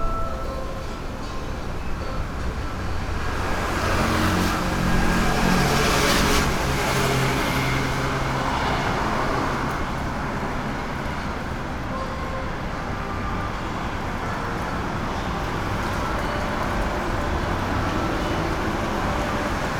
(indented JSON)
{
  "title": "Cianjhen District, Kaohsiung - Traffic Noise",
  "date": "2012-04-05 14:24:00",
  "description": "Traffic Noise+Department store audio, Sony PCM D50",
  "latitude": "22.61",
  "longitude": "120.30",
  "altitude": "3",
  "timezone": "Asia/Taipei"
}